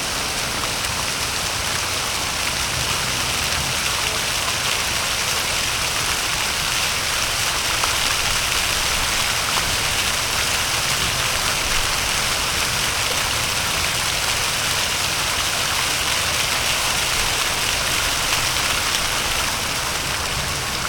Rennes, Fontaine de la gare (gauche)
Fontaine de gauche au sol, jet vertical de la gare de Rennes (35 - France)
Rennes, France, 8 May 2011